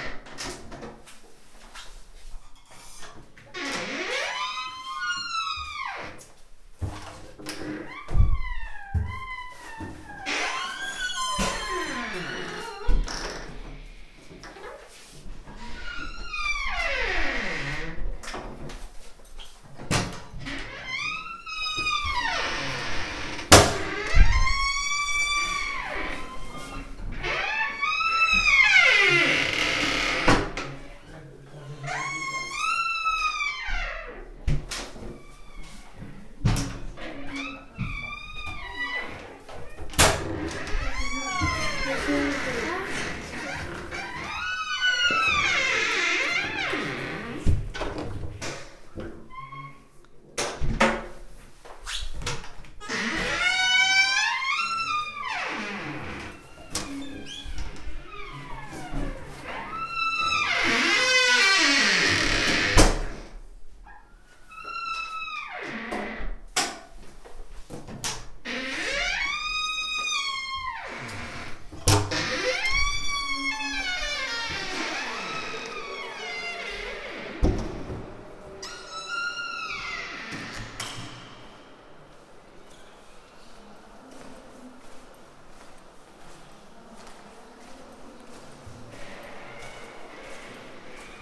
Fundação Serralves, Museu, Porto, Portugal - Architectural art installation
Moving through an art installation erected from many old doors by Polish artist Monika Sosnowska (* 1976)
Área Metropolitana do Porto, Norte, Portugal, 24 March 2015